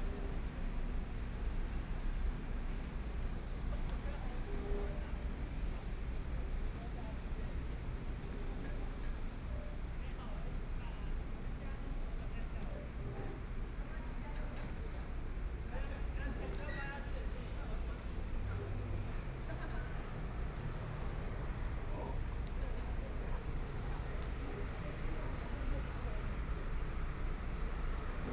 鼓山區惠安里, Kaohsiung City - The river yacht
The river yacht, Traffic Sound
Sony PCM D50+ Soundman OKM II
Kaohsiung City, Taiwan, 21 May 2014